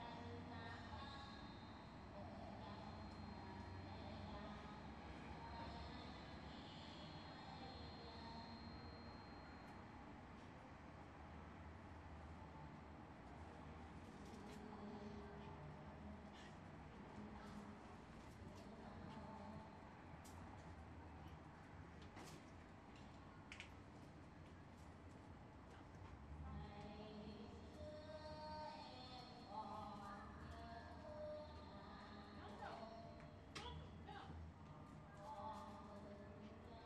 {"title": "Northwest Berkeley, Berkeley, CA, USA - neighborhood sound", "date": "2012-11-24 17:50:00", "description": "my neighbor practicing her vocal skills, funny how you could hear the occasional audio-feedback in those speakers\n\"What we hear is mostly noise. When we ignore it, it disturbs us. When we listen to it, we find it fascinating.\" John Cage from Silence", "latitude": "37.87", "longitude": "-122.30", "altitude": "10", "timezone": "America/Los_Angeles"}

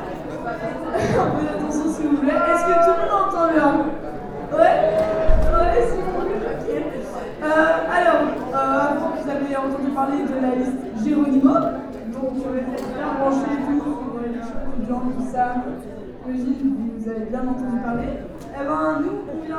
{"title": "Centre, Ottignies-Louvain-la-Neuve, Belgique - Cactus Awakens", "date": "2016-03-11 14:05:00", "description": "Students trade union presents their programm with a view to the future elections.", "latitude": "50.67", "longitude": "4.61", "altitude": "115", "timezone": "Europe/Brussels"}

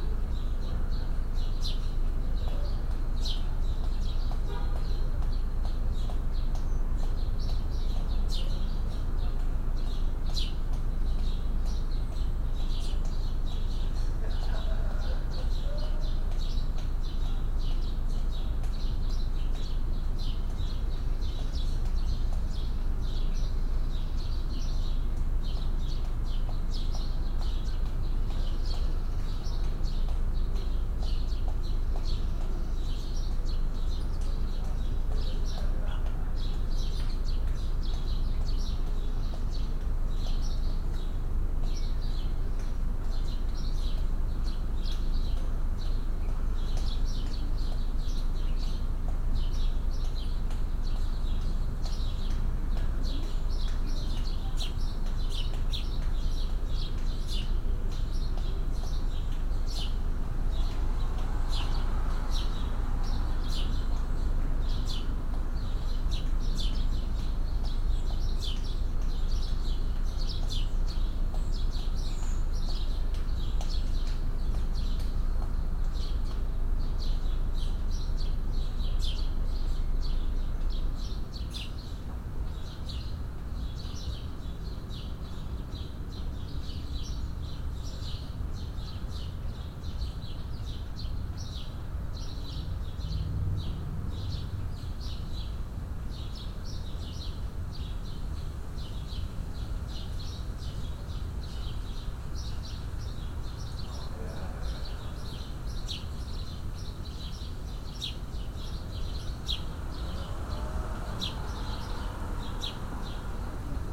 {"title": "Denison Square, Toronto Ontario Canada - 43°3914.5N 79°2407.1W, July 17, 8am", "date": "2020-07-17 08:00:00", "description": "This recording is part of a broader inquiry into the limitations of archiving and the visual strata of the places we call “home”.\nI have been (visually) documenting the curated and uncurated other-than human beings found in my front garden located in Kensington Market across the street from a well-used park. “The Market” is a commercial/residential neighbourhood in traditional territory of the Mississaugas of the Credit, the Anishnabeg, the Chippewa, the Haudenosaunee and the Wendat peoples covered by Treaty 13 and the Williams Treaty.\nIt has been home to settler, working class humans through the past decades, and is known to resist change by residents through participatory democracy. Because of rising rents, food sellers are being pushed out and Kensington is becoming Toronto’s new entertainment district. The pandemic has heightened the neighbourhood’s overlapping historical and contemporary complexities.", "latitude": "43.65", "longitude": "-79.40", "altitude": "99", "timezone": "America/Toronto"}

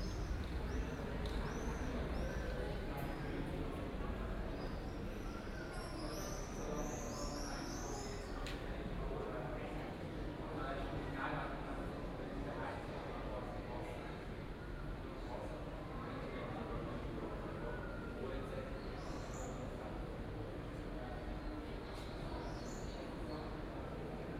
{"title": "Aarau, Center, Schweiz - Rathausgasse Mitte", "date": "2016-06-28 18:23:00", "description": "Continuation of the recording Rathausgasse Anfang, the recording was a walk at an early summer evening and is part of a larger research about headphones.", "latitude": "47.39", "longitude": "8.04", "altitude": "391", "timezone": "Europe/Zurich"}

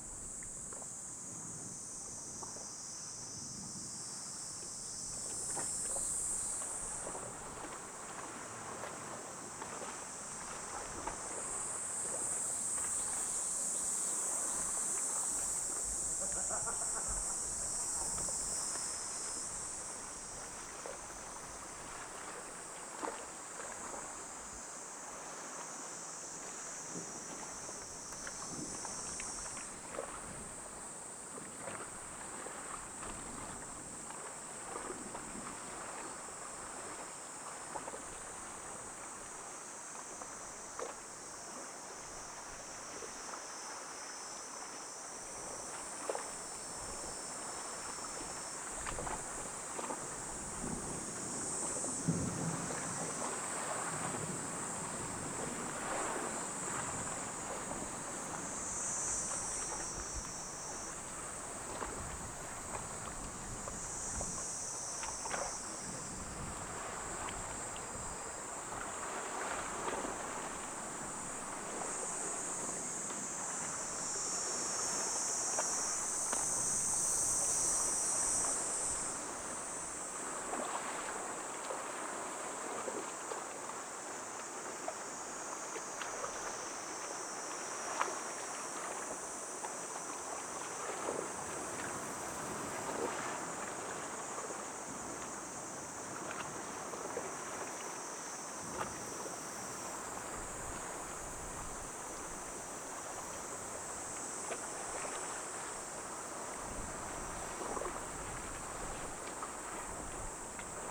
{"title": "Yuyatsuo, Nagato, Yamaguchi, Japon - Stones statues of fox", "date": "2019-07-30 16:29:00", "description": "Stones statues of fox and mysterious scenery.\nMarantz PMD661MKII recorder with microphone ST M/S AKG Blue line CK 94 and Sennheiser mkh 416 p48", "latitude": "34.42", "longitude": "131.06", "altitude": "10", "timezone": "Asia/Tokyo"}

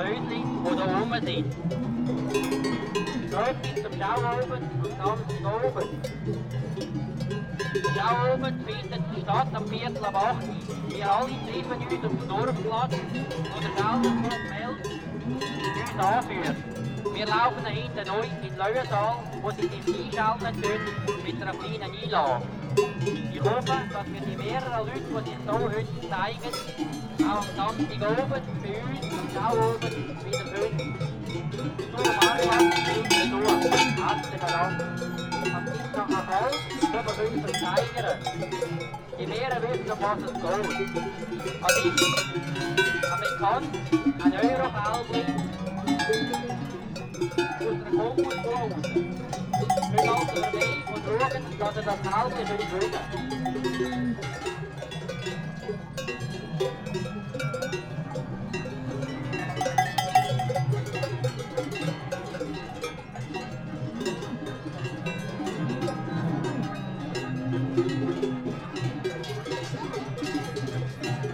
{"title": "Mels, Schweiz - Viehmarkt", "date": "1999-10-15 12:40:00", "description": "Kuhglocken, aufgeregtes Vieh, Ansagen, Stimmen\nOktober 1999", "latitude": "47.05", "longitude": "9.41", "altitude": "483", "timezone": "Europe/Zurich"}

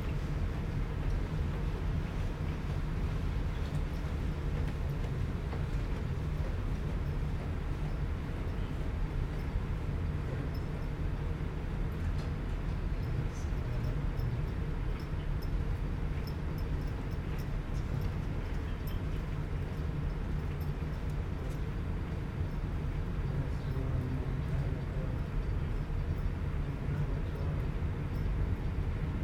{"title": "Calgary +15 9th Ave SE bridge", "description": "sound of the bridge on the +15 walkway Calgary", "latitude": "51.04", "longitude": "-114.06", "altitude": "1061", "timezone": "Europe/Tallinn"}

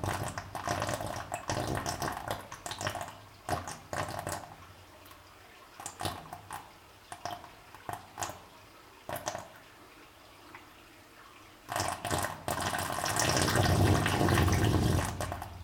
{"title": "Volmerange-les-Mines, France - The whoopee pipe 2", "date": "2016-01-30 13:30:00", "description": "The same sound as the whoopee pipe, but made with a binaural microphone. This pipe has a very big illness and should consult a doctor !", "latitude": "49.44", "longitude": "6.07", "altitude": "339", "timezone": "Europe/Paris"}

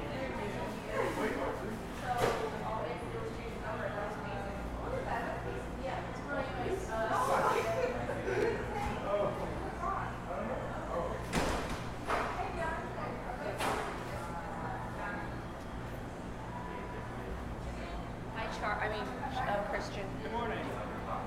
{"title": "Bolton Hill, Baltimore, MD, USA - Chilling at Doris", "date": "2016-09-19 10:00:00", "description": "Recorded in Cafe Doris with a Zoom H4n recorder.", "latitude": "39.31", "longitude": "-76.62", "altitude": "35", "timezone": "America/New_York"}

